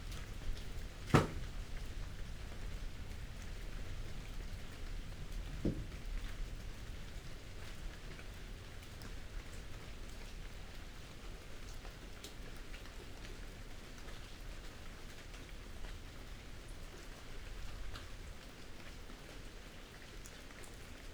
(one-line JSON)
{"title": "Thunder over Chuncheon Lake (early August) 춘천호수 천둥(8월 시작때에)", "date": "2020-08-07 22:30:00", "description": "Thunder over Chuncheon Lake (early August)_춘천호수 천둥(8월 시작때에)...recorded at the beginning of the monsoon season...this year there were continuous rains and daily thunder storms throughout August and into September...this was recorded late at night in a 8-sided pagoda on the edge of Chuncheon lake...the sounds reverberate off the surrounding hills and travel clearly over the lake...", "latitude": "37.87", "longitude": "127.69", "altitude": "91", "timezone": "Asia/Seoul"}